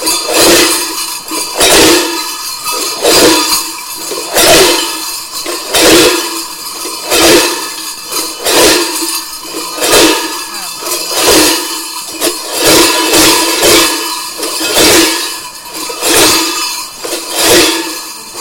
M.Lampis - Mamuthones (carnival) for udo noll
Mamoiada Province of Nuoro, Italy